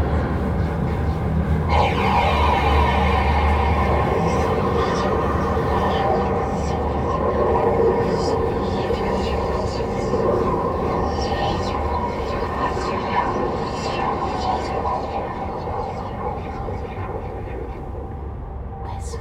Cetatuia Park, Klausenburg, Rumänien - Cluj, Fortress Hill project, water fountain sculptures
At the temporary sound park exhibition with installation works of students as part of the Fortress Hill project. Here the close up recorded sound of the water fountain sculpture realized by Raul Tripon and Cipi Muntean in the first tube of the sculpture.
Soundmap Fortress Hill//: Cetatuia - topographic field recordings, sound art installations and social ambiences
Cluj-Napoca, Romania